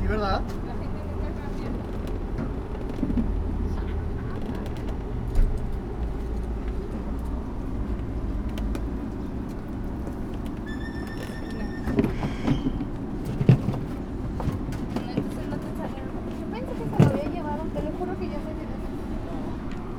Antonio Segoviano LB, Los Paraisos, León, Gto., Mexico - Tortas del Plaza.
I made this recording on February 17th, 2020, at 3:57 p.m.
I used a Tascam DR-05X with its built-in microphones and a Tascam WS-11 windshield.
Original Recording:
Type: Stereo
Esta grabación la hice el 17 de febrero 2020 a las 15:57 horas.